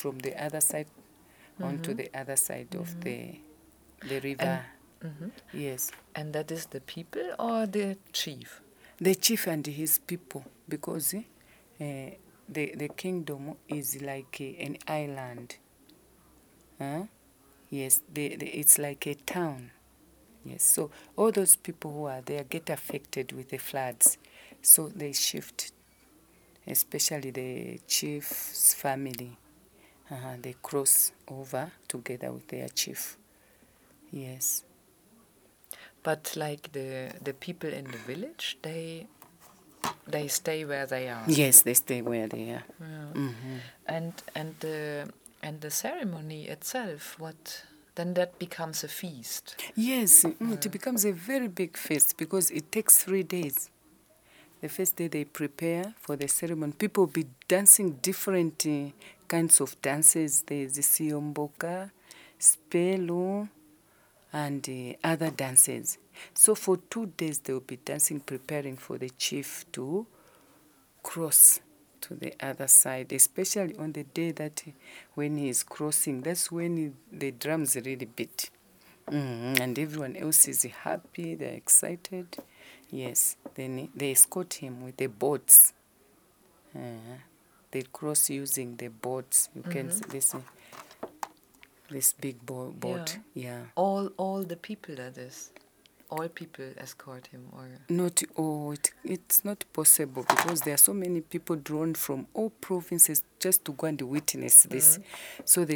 Mass Media Centre, ZNBC, Lusaka, Zambia - Kumbuka, crossing the Zambezi...
Mrs. Namunkolo continues describing in detail the “Kumbuka” ceremony of the Lozi people a ritual crossing of the Zambezi river twice a year by the King and the royal household…
The entire playlist of recordings from ZNBC audio archives can be found at: